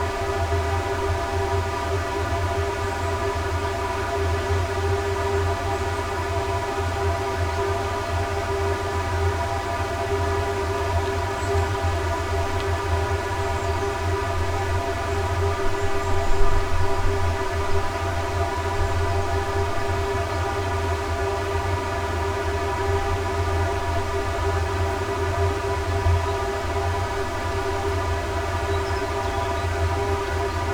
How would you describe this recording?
large upturned clay urn 大항아리...roadside